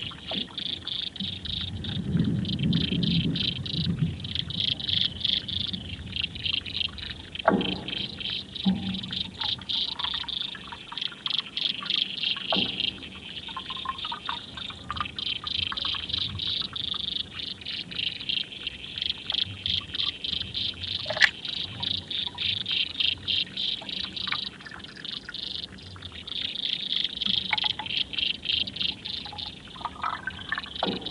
Maryhill Locks, Glasgow, UK - The Forth & Clyde Canal 004: Corixidae (water boatmen)
Recorded with a pair of Aquarian Audio H2a hydrophones – socially distanced at 2m in stereo. Left & right channel hydrophones at varying depths under the canal jetty. Recorded with a Sound Devices MixPre-3